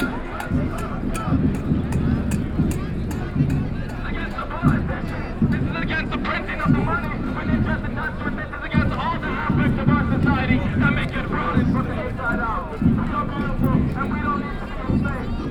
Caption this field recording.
Occupy Brussels, Square Sainctelette, Megaphone